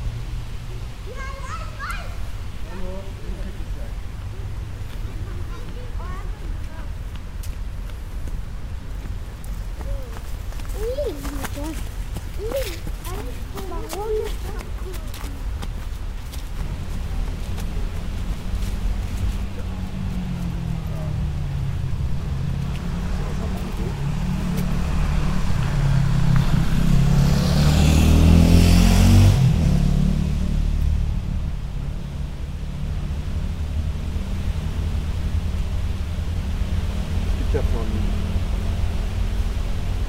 Bernhard-Nocht-Straße
Aus der Serie "Immobilien & Verbrechen": ein kalter Herbstnachmittag.
Keywords: St. Pauli, Wohnen auf St. Pauli
Hamburg, Germany, 31 October 2009, ~15:00